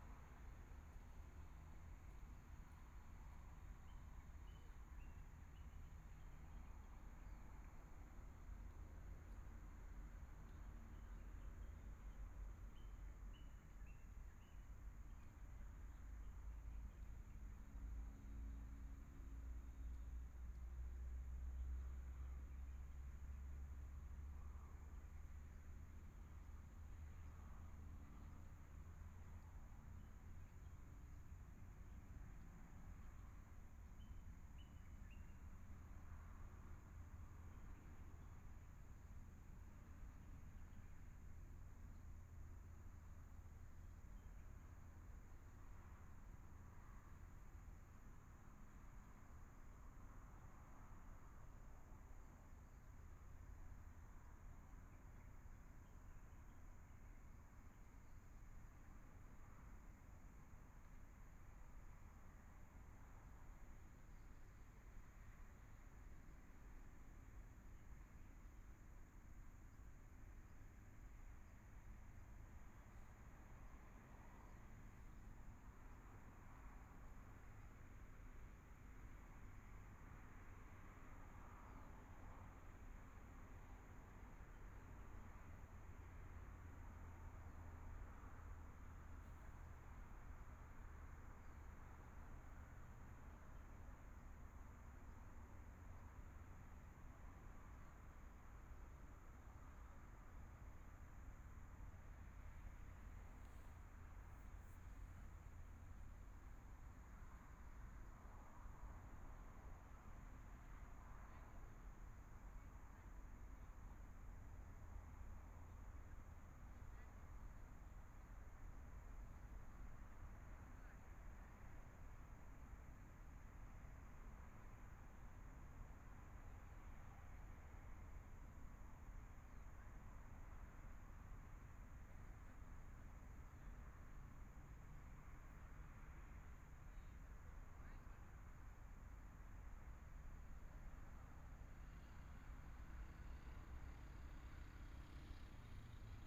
Traffic Sound, Environmental sounds, Binaural recordings, Zoom H4n+ Soundman OKM II ( SoundMap20140117- 8)